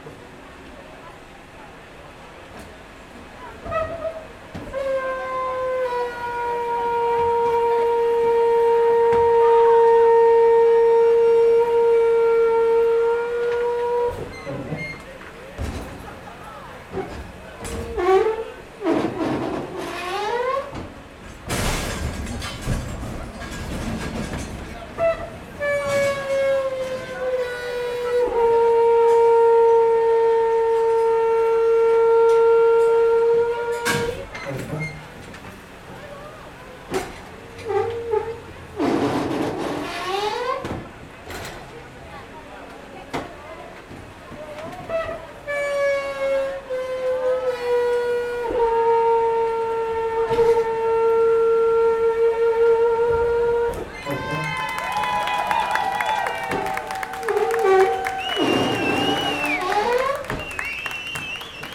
MUSICAL DUMPSTER Lisboa, Portugal - MUSICAL DUMPSTER
Garbage dumpster, with very musical tones, changing and collecting garbage with a mechanical arm. People talking, and a warm applause at the end, almost like a sound performance. Recorded with a Zoom H5, internal mics (XY stereo config).
Área Metropolitana de Lisboa, Portugal, 11 September 2020